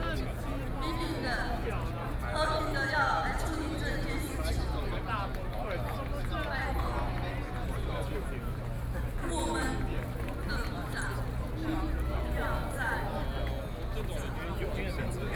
Protest against the government, A noncommissioned officer's death, More than 200,000 people live events, Sony PCM D50 + Soundman OKM II
Taipei City, Taiwan